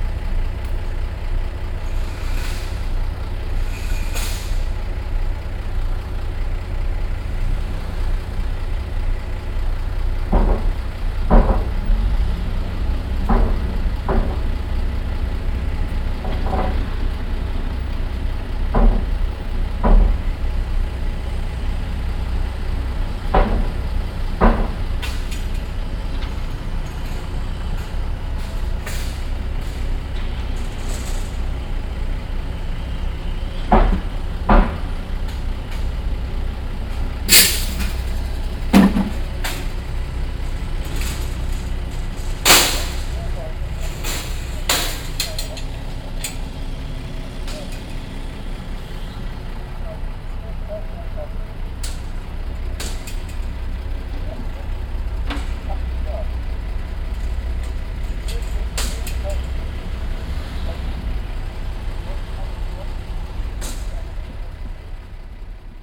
an strassenabaustelle mittags, bauarbeiten, stimmen, verkehr und das heranfahren eines lkw's
soundmap nrw - social ambiences - sound in public spaces - in & outdoor nearfield recordings
mainzerstrasse-ubierring, 2 September, 17:31